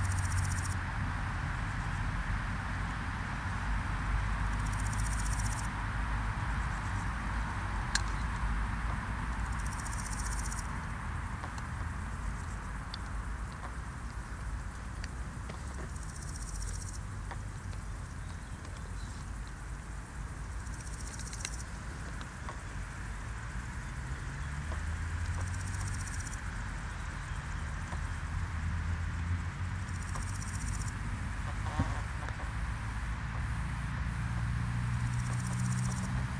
Hönower Siedlung, Hoppegarten, Germany - Grasshoppers in Hönow
Recorded on the 16th of July, whilst taking a walk around the area of Hönow - I was testing the micbooster microphones, this is an unedited recording of the crickets. It was a warm day, a bit of wind but it didn't really effect the microphones. The sound at the end is of someone about to sneeze.